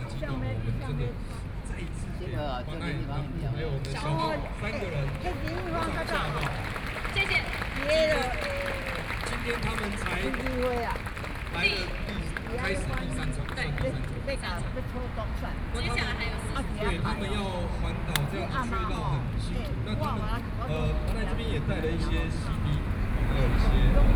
anti–nuclear power, in front of the Plaza, Broadcast sound and traffic noise, Sony PCM D50 + Soundman OKM II
6 September, ~21:00